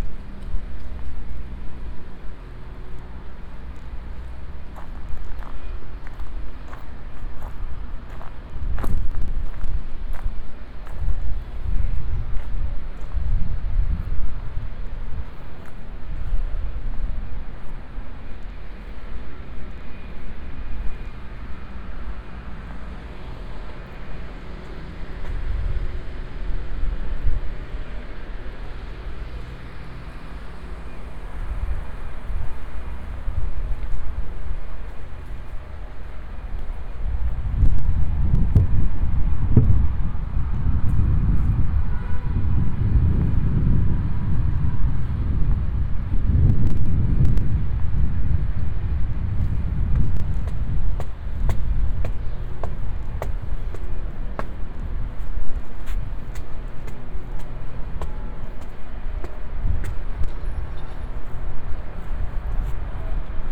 Cuenca, Cuenca, España - #SoundwalkingCuenca 2015-11-27 A soundwalk through the San Antón Quarter, Cuenca, Spain
A soundwalk through the San Antón quarter, Cuenca, Spain.
Luhd binaural microphones -> Sony PCM-D100.